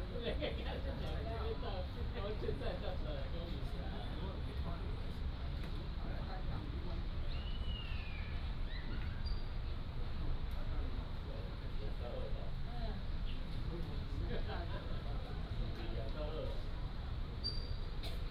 臺南公園, Tainan City - in the Park
Old man chatting, Distant child game area
Tainan City, Taiwan, 18 February, ~17:00